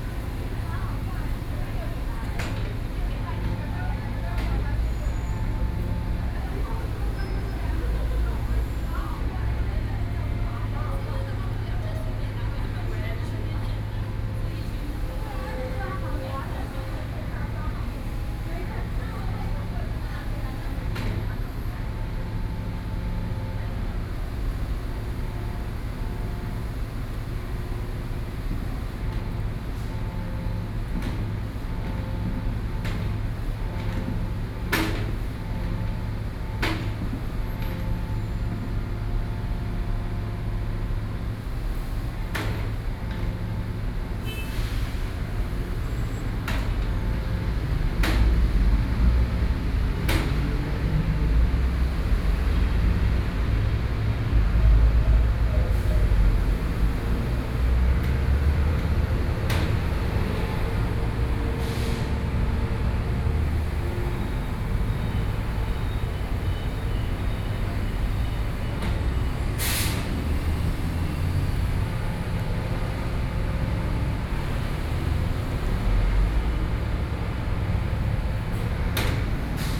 Peace Memorial Park, Taipei - 228 Peace Memorial Park
A group of women chatting, The next construction machinery, Sony PCM D50 + Soundman OKM II